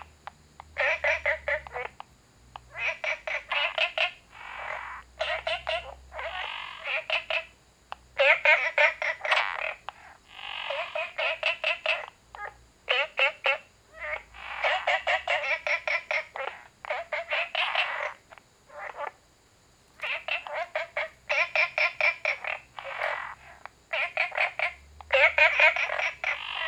綠屋民宿, 桃米里 Taiwan - Frogs chirping
Frogs chirping
Zoom H2n MS+XY
Puli Township, Nantou County, Taiwan, 6 October, 18:33